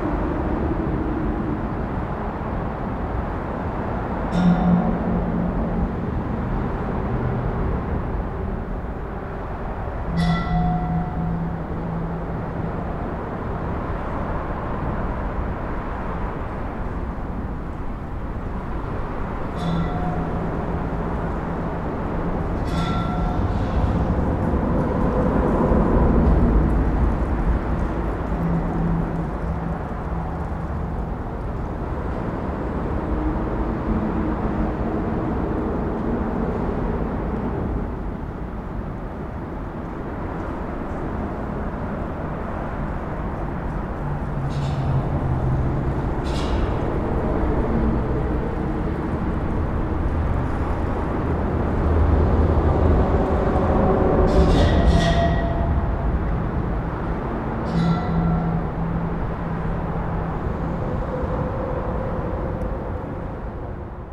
On the Creek under 35W - Bridgesounds
The recent bridge expansion over Minnehaha Creek, played by the traffic above, rings regularly and resonates deeply.